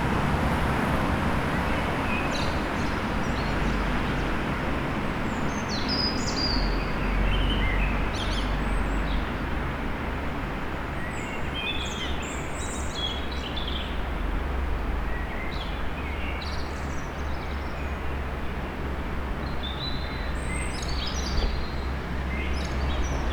Innstraße, Innsbruck, Österreich - Morgenstimmung im Waltherparkpark
vogelweide, waltherpark, st. Nikolaus, mariahilf, innsbruck, stadtpotentiale 2017, bird lab, mapping waltherpark realities, kulturverein vogelweide, vogelgezwitscher, autos fahren vorbei